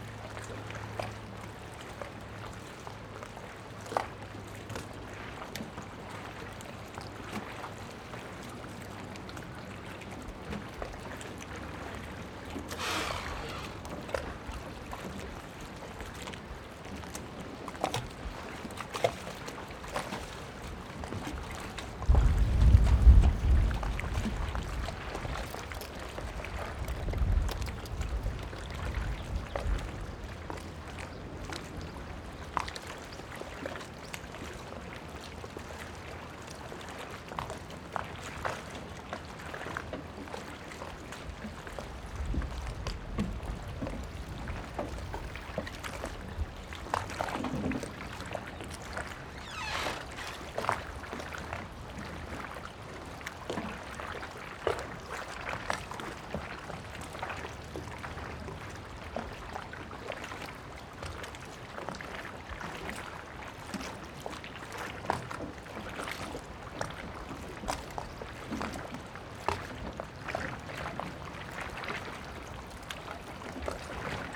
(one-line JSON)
{"title": "赤馬漁港, Xiyu Township - In the dock", "date": "2014-10-22 14:37:00", "description": "In the dock, Waves and tides\nZoom H6 +Rode NT4", "latitude": "23.58", "longitude": "119.51", "altitude": "8", "timezone": "Asia/Taipei"}